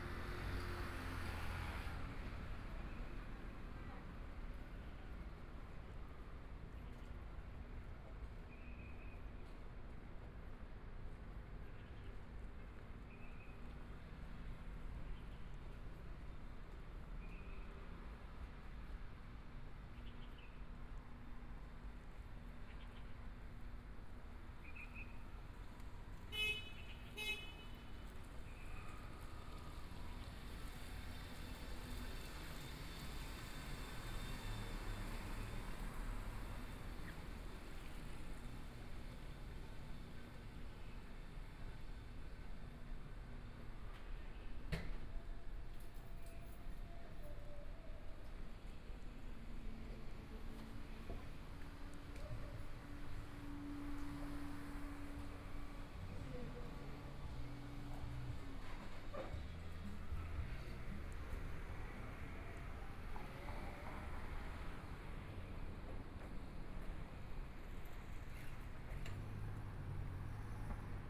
中山區永安里, Taipei city - walking in the Street
walking in the Street, Traffic Sound, Sunny mild weather
Please turn up the volume
Binaural recordings, Zoom H4n+ Soundman OKM II